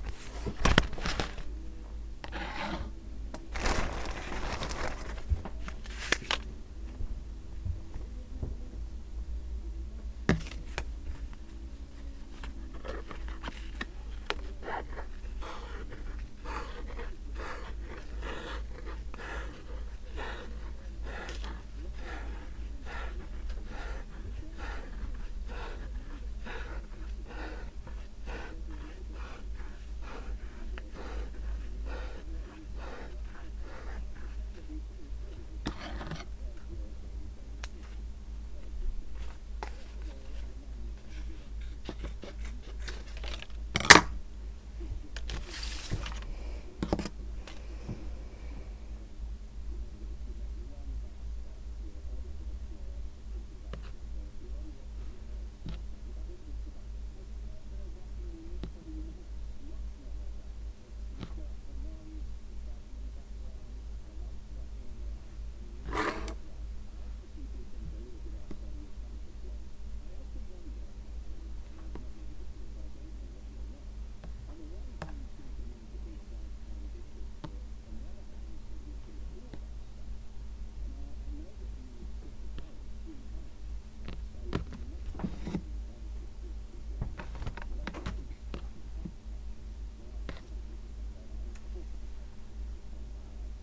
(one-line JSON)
{"title": "Jamieson & Smith, Shetland Islands, UK - Ella making up shade cards", "date": "2013-08-06 15:48:00", "description": "This is the sound of Ella assembling shade cards for prospective buyers of Shetland wool. Jamieson & Smith stock an amazing number of different shades, and distant buyers need to be able to see tiny samples of all of these. What you can hear is Ella taking balls of the different shades out of a plastic storage bag, cutting small lengths of them, and then tying them onto pieces of card with the shade numbers corresponding to the shades written on them. The sound in Jamieson & Smith is lovely; a mellow, woody tone, with the softness of a place that is stacked floor to ceiling with amazing knitted things and objects comprised of wool.", "latitude": "60.16", "longitude": "-1.16", "altitude": "1", "timezone": "Europe/London"}